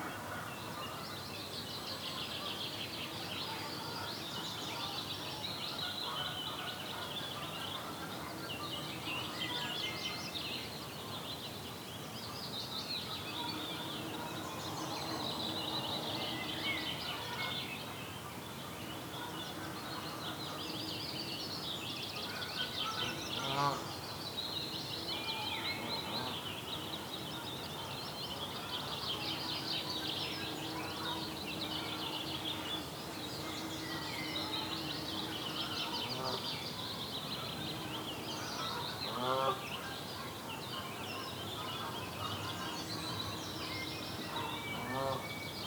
Early Morning on Lock Awe, UK - Black Islands
8 May 2022, 05:30